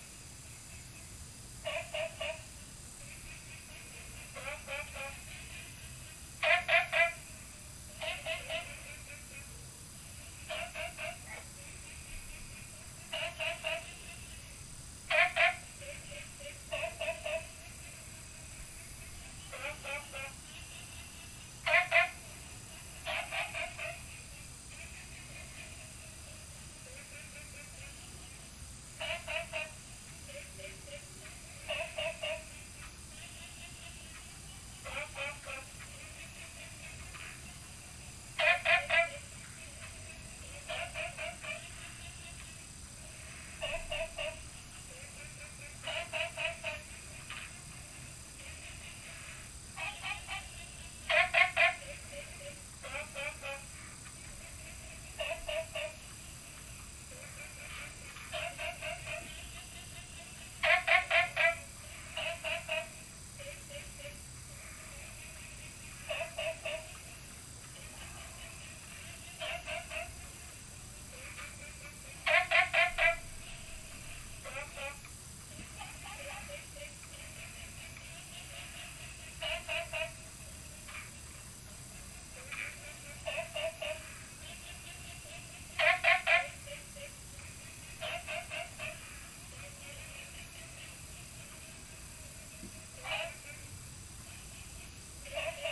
青蛙ㄚ 婆的家, Puli Township - Frog calls
Frog calls
Binaural recordings
Sony PCM D100+ Soundman OKM II